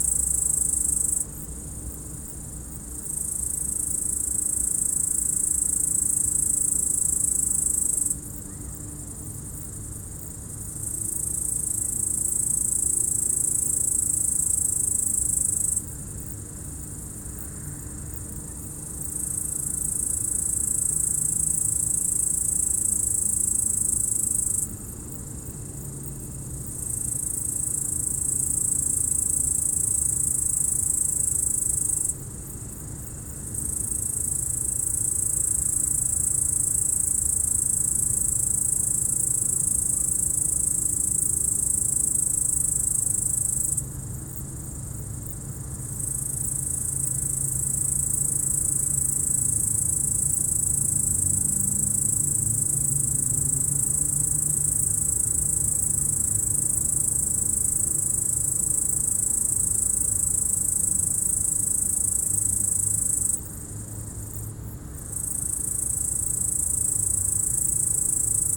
Bourdeau, France - Belvédère, belaudière.
Route du col du Chat virage belvédère, les insectes et les bruits de la vallée paysage sonore au crépuscule. Enregistreur Tascam DAP1 DAT. Extrait d'un CDR gravé en 2006 .